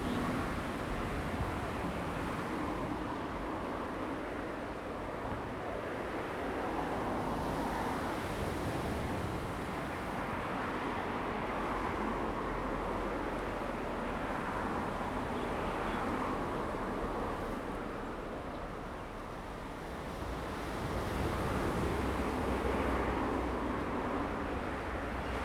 Jilin Rd., Taitung City - On the embankment
On the embankment, Traffic Sound, In the nearby fishing port, The weather is very hot
Zoom H2n MS +XY
Taitung County, Taitung City, 都蘭林場東部駕訓班, September 2014